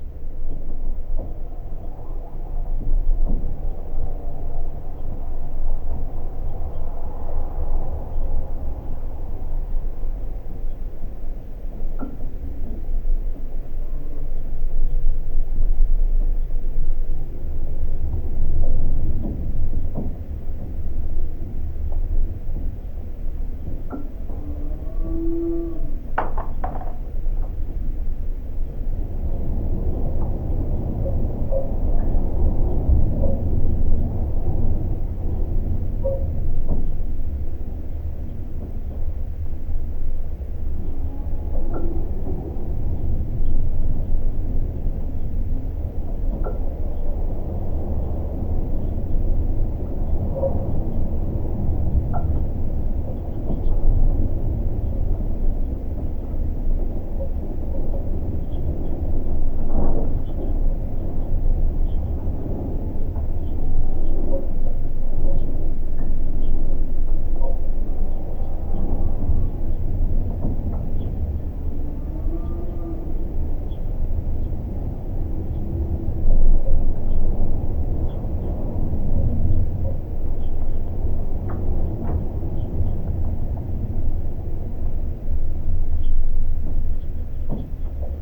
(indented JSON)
{
  "title": "Gaigaliai, Lithuania, old watertower",
  "date": "2021-02-16 16:25:00",
  "description": "old watertower covered with frozen water and icicles. mild wind. recorded with geophone. very quiet tower - I had to boost sound a little bit...",
  "latitude": "55.60",
  "longitude": "25.60",
  "altitude": "142",
  "timezone": "Europe/Vilnius"
}